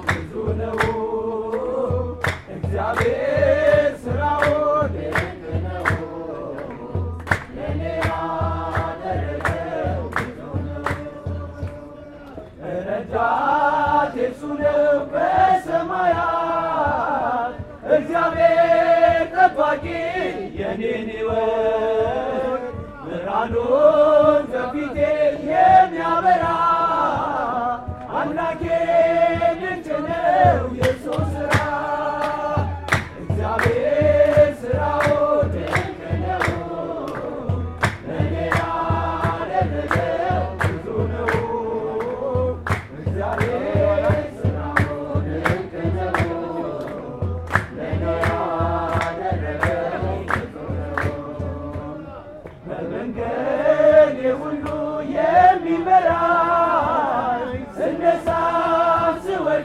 Une dizaine d'hommes, une dizaine de femmes entonnent plusieurs chansons pour célébrer le mariage d'un couple qui se déroule. Beaucoup de caméras et d'appareils photos captent cet évènement, ainsi que les autres mariages autour. Il fait très beau. Un grand Soleil illumine la scène.
Addis Ababa, Ethiopia